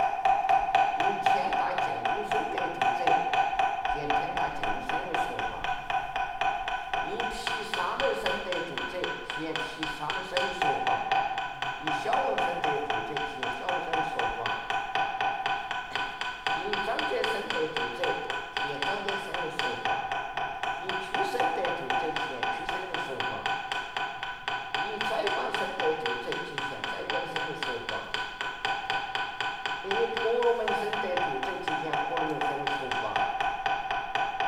{"title": "Shengshou Temple, Dazu Qu, Chongqing Shi, Chiny - The monk is praying - binaural", "date": "2016-10-24 13:30:00", "description": "The monk is praying in Shengshou Temple\nbinaural recording, Olympus LS-100 plus binaural microphones Roland CS-10EM\nSuavas Lewy", "latitude": "29.74", "longitude": "105.79", "altitude": "368", "timezone": "GMT+1"}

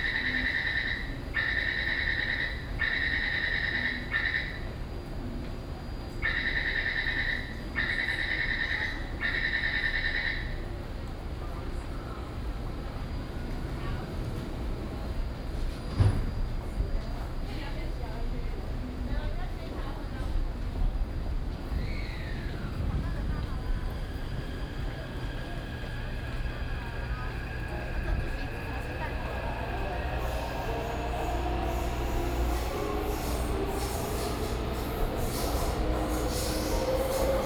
{"title": "Gongguan Station, Taipei City, Taiwan - In the station platform", "date": "2016-03-03 16:39:00", "description": "In the station platform", "latitude": "25.01", "longitude": "121.53", "altitude": "18", "timezone": "Asia/Taipei"}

